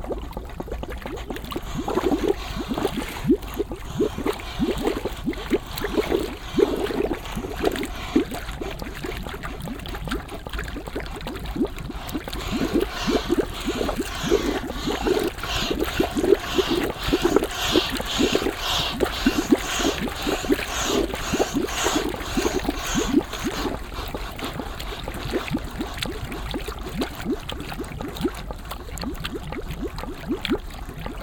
wasserorchester, blubber wanne
H2Orchester des Mobilen Musik Museums - Instrument Blubber Wanne - temporärer Standort - VW Autostadt
weitere Informationen unter